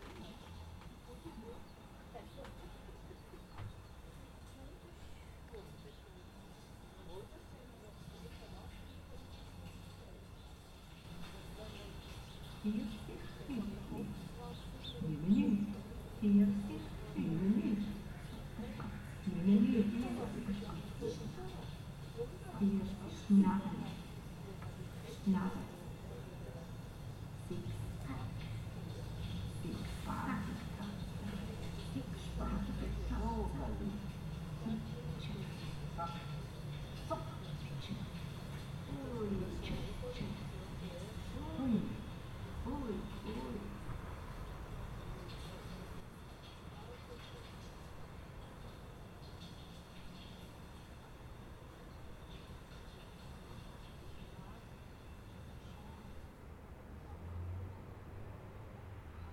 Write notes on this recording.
Jetzt Kunst 2011, Projekt maboart zum abtröchne, eine Klangcollage als Nachklang an den Sommer